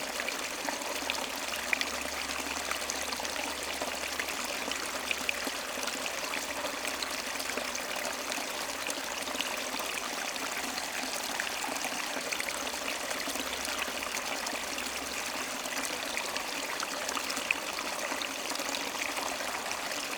The Ry d'Hez river, flowing in a big wood jam.
9 April, 14:50